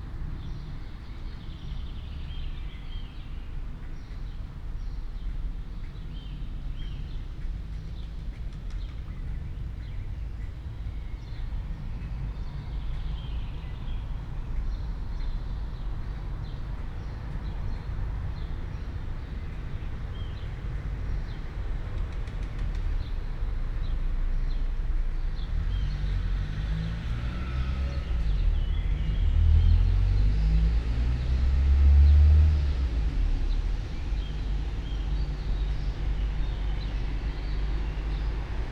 all the mornings of the ... - jun 2 2013 sunday 08:46